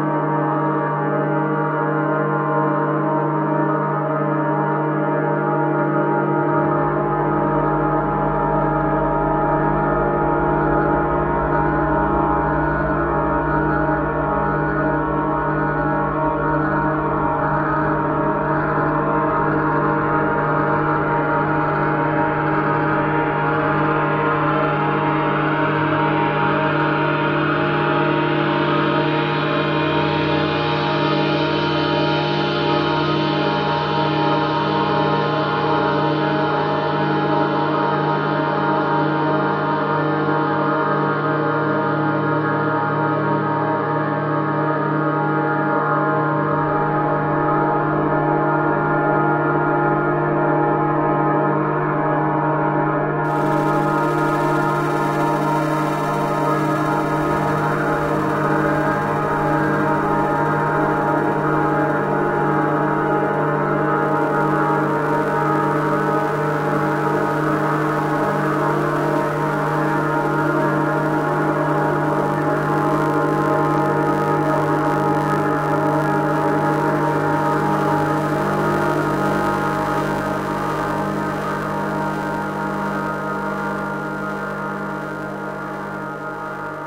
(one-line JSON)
{"title": "Middleton Light Railway - Middleton Light Railway 1758", "description": "The worlds oldest working railway", "latitude": "53.77", "longitude": "-1.54", "altitude": "37", "timezone": "GMT+1"}